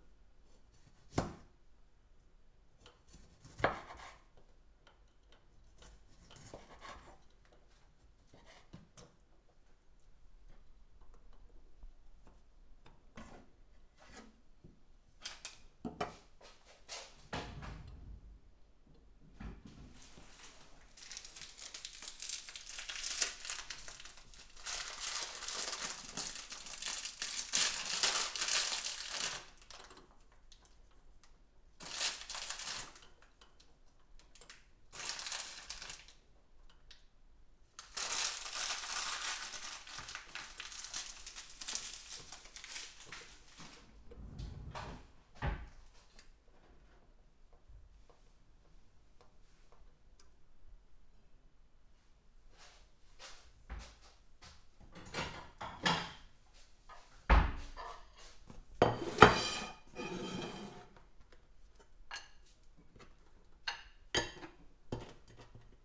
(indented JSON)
{
  "title": "Poznan, Mateckiego street, kitchen - wall drilling & sandwich making duet",
  "date": "2014-03-29 16:31:00",
  "description": "recording in the kitchen. neighbors doing renovations, drilling holes, hammering and chiseling on the other side of a wall. groceries unpacking and making a sandwich sounds on our side.",
  "latitude": "52.46",
  "longitude": "16.90",
  "altitude": "97",
  "timezone": "Europe/Warsaw"
}